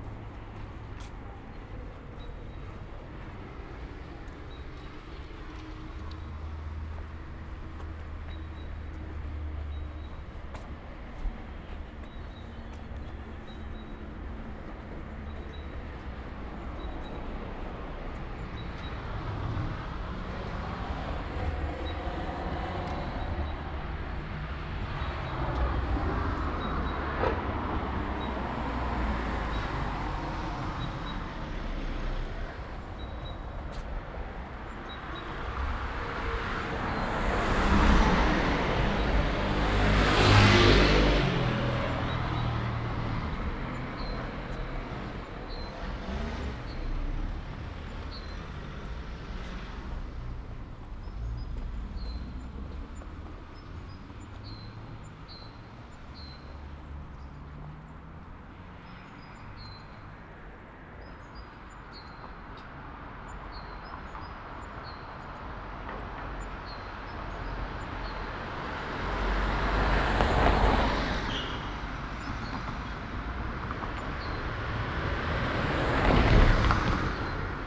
Ascolto il tuo cuore, città. I listen to your heart, city. Several chapters **SCROLL DOWN FOR ALL RECORDINGS** - “No shopping in the open (closed) market at the time of covid19” Soundwalk
“No shopping in the open (closed) market at the time of covid19” Soundwalk
Chapter XX of Ascolto il tuo cuore, città
Tuesday March 24 2020. No shopping in the open air square market at Piazza Madama Cristina, district of San Salvario, Turin: the market is closed. Two weeks after emergency disposition due to the epidemic of COVID19.
Start at 11:15 a.m., end at h. 11:41 p.m. duration of recording 25’57”''
The entire path is associated with a synchronized GPS track recorded in the (kml, gpx, kmz) files downloadable here:
Torino, Piemonte, Italia, 24 March 2020, ~11:00